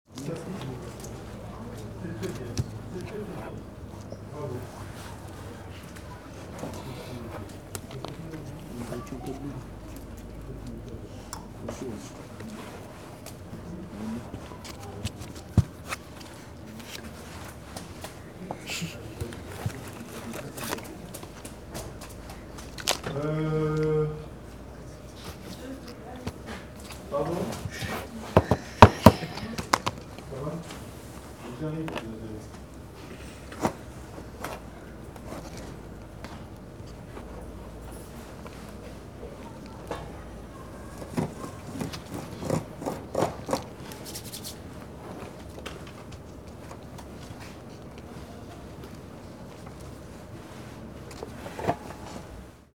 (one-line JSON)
{"title": "Atelier d'horticulture, collège de Saint-Estève, Pyrénées-Orientales, France - Atelier d'horticulture, ambiance 2", "date": "2011-03-17 15:06:00", "description": "Preneur de son : Arnaud", "latitude": "42.71", "longitude": "2.84", "altitude": "46", "timezone": "Europe/Paris"}